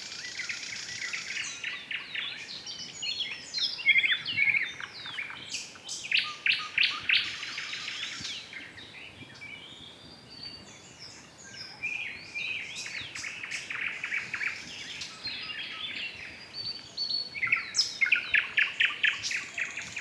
Bug szóstka, Kuligów, Polska - Bug river szóstka
An evening concert of birds on the river Bug. Recorded with Roland R-26.
Kuligów, Poland, 18 May 2018, 20:12